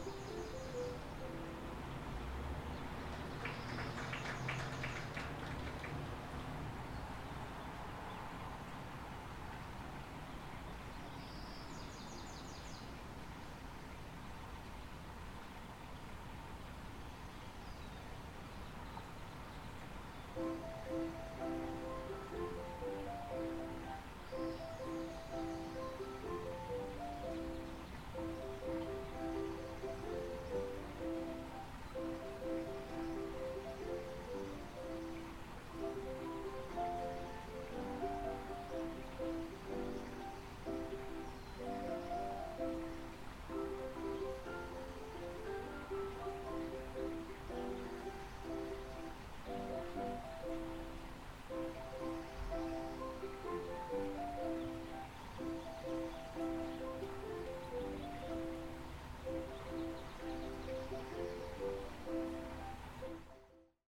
Olive Ave, San Rafael, CA, USA - piano lessons dominican college
recording taken on dominican university's campus, right outside the music building. there is a small creek close by.